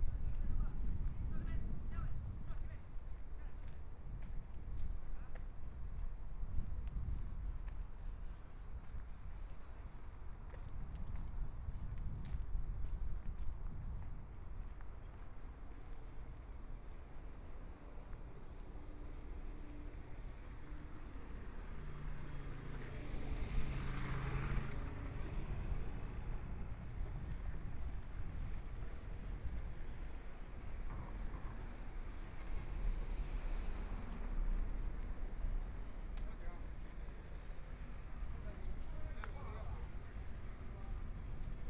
中山區大佳里, Taipei City - small Road
walking on the small Road, Walking in the direction of the airport, Aircraft flying through, Traffic Sound
Binaural recordings, ( Proposal to turn up the volume )
Zoom H4n+ Soundman OKM II
Taipei City, Taiwan